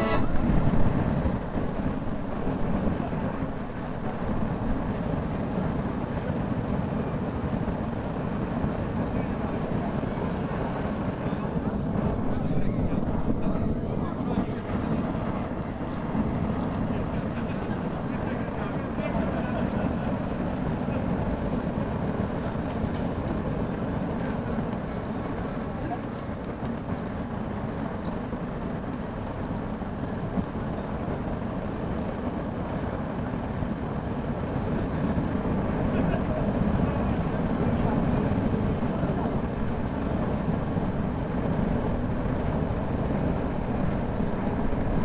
some village's celebration. the sound after the music ends is of a big gas burner - some kind of a "holy fire"
Utena, Lithuania, 18 August 2012, 16:20